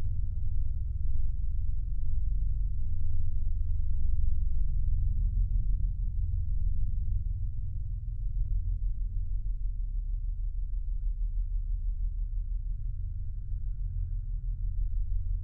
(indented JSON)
{
  "title": "Utena, Lithuania, metallic ornament (low fq)",
  "date": "2021-03-28 16:40:00",
  "description": "Some concrete/metallic \"sculpture\" from soviet times. Geophone applied on metallic part of it. Wind and nearby passing trucks. Low frequency listening.",
  "latitude": "55.50",
  "longitude": "25.63",
  "altitude": "126",
  "timezone": "Europe/Vilnius"
}